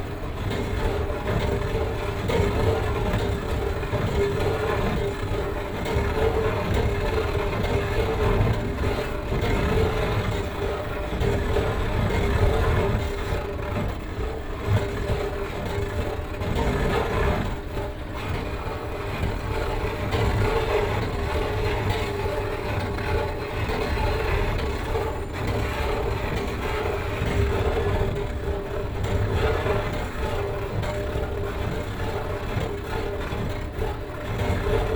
Athen, Victoria - metro entrance, scatchy escalator
this escalator at Victoria metro station doesn't sound very healthy.
(Sony PCM D50, OKM2)
6 April, ~22:00